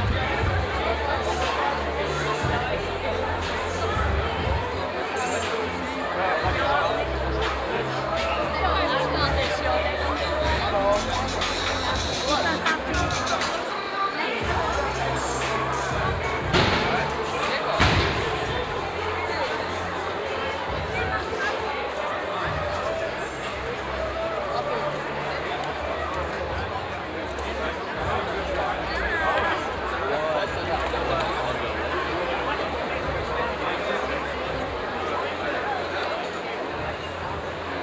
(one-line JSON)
{"title": "Aarau, Night before Maienzug Schweiz - In front of Tuchlaube", "date": "2016-06-30 17:00:00", "description": "A walk through the crowd late afternoon the day before Maienzug, a yearly march of young people dressed in white through the town, you hear music from the bars, people chatting and the shootings of the cannon is also audible.", "latitude": "47.39", "longitude": "8.04", "altitude": "386", "timezone": "Europe/Zurich"}